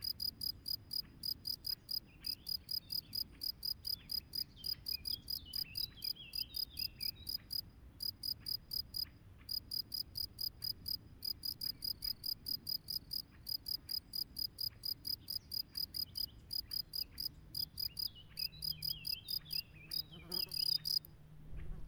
Meadow at the Tauber west of Werbach
Crickets singing in a meadow. Recorded with an Olympus LS 12 Recorder using the built-in microphones. Recorder placed on the ground near a cricket-burrow with the microphones pointing skyward. In the background various motor noises as well as birds singing and Cyclists passing on the nearby bike-path.
19 June 2021, 10:30